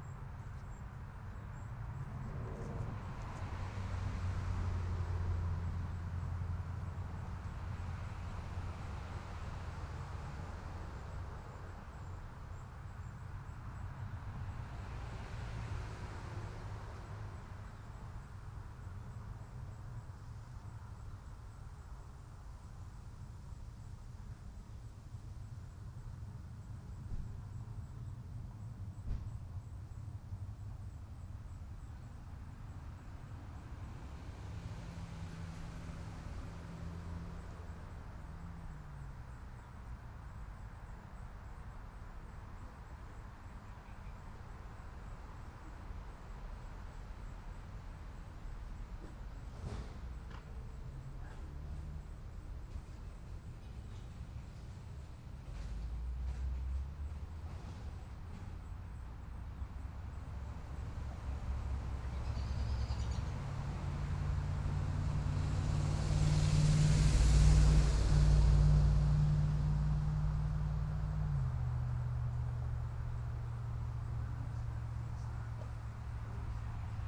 43737 Dorisa Ct
Backyard sounds consisting of crickets, birds, traffic and airplane.
MI, USA